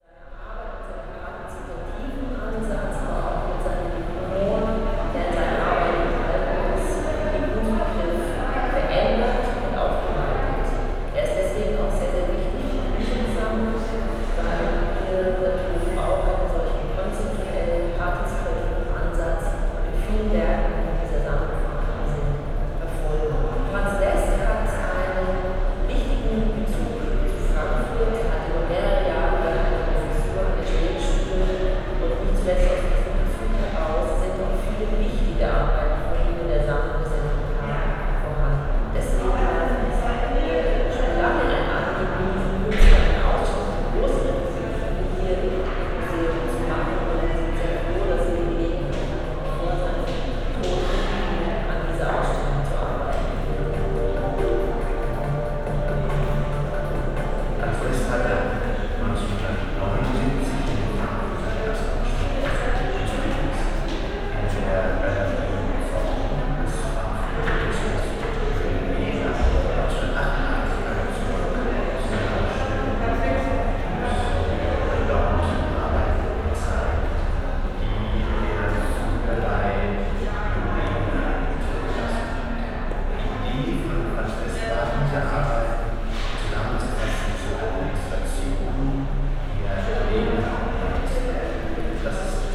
frankfurt/main, domstraße: museum für moderne kunst - the city, the country & me: museum of modern art
video during the exibition "franz west - where is my eight?"
the city, the country & me: september 27, 2013